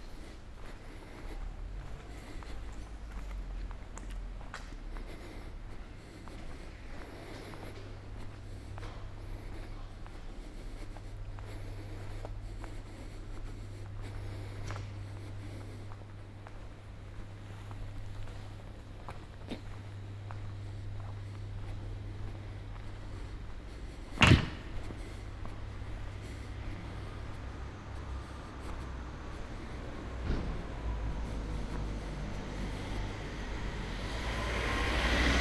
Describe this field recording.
Walk day on the trace of Walter Benjamin: same path as previous nigh walking (ee here) of previous night. start at Port Bou City Library at 19:20 p.m. of Thursday September 28 2017; up to Memorial Walter Benjamin of Dani Karavan, enter the staircases of the Memorial, crossing friends visiting the memorial, slow walk into the cemetery, sited on external iron cube of Memorial, in front of sea and cemetery, back to village.